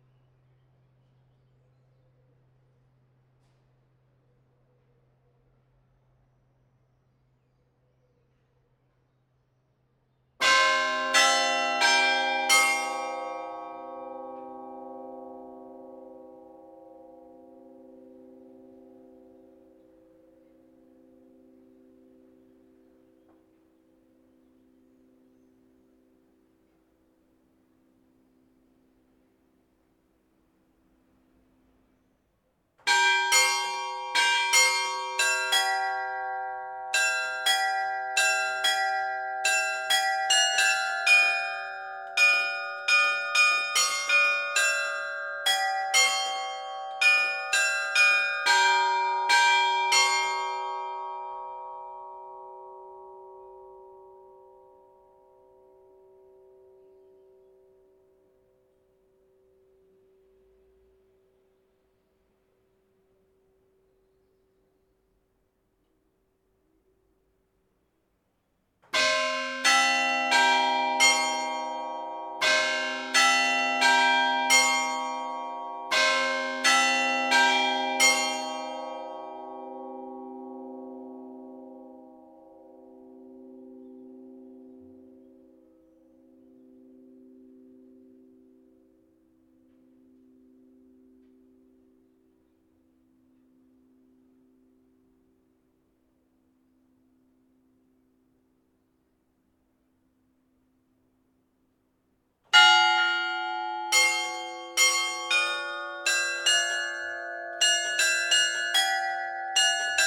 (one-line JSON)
{"title": "Pl. des Héros, Arras, France - Carillon - Beffroi - Arras", "date": "2020-06-17 10:00:00", "description": "Arras (Pas-de-Calais)\nCarillon du beffroi d'Arras - Ritournelles automatisées\nl'heure - le quart-d'heure - la demi-heure - les trois-quart-d'heure", "latitude": "50.29", "longitude": "2.78", "altitude": "77", "timezone": "Europe/Paris"}